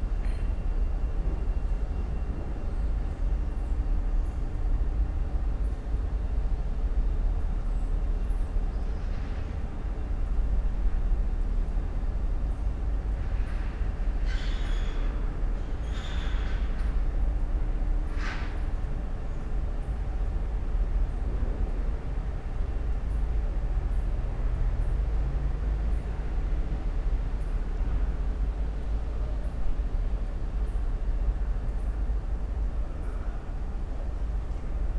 Vicolo dei Calafai, Trieste, Italy - bats